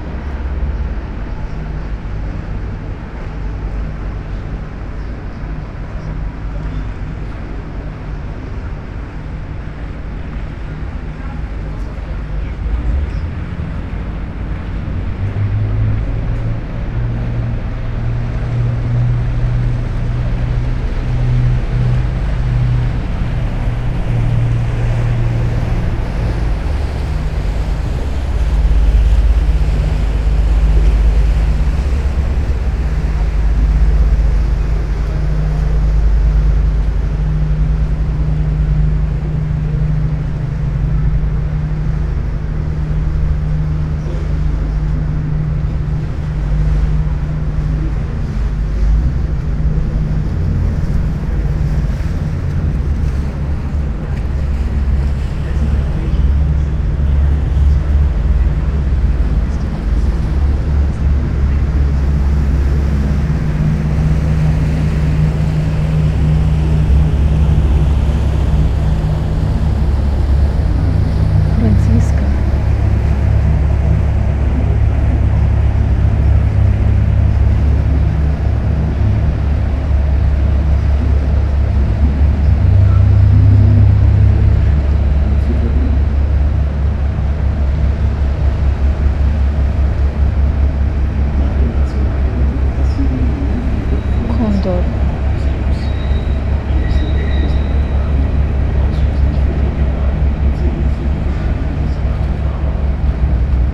head of an island, arcades, Mitte, Berlin, Germany - standing still
rivers Spree ships and S-bahn trains
Sonopoetic paths Berlin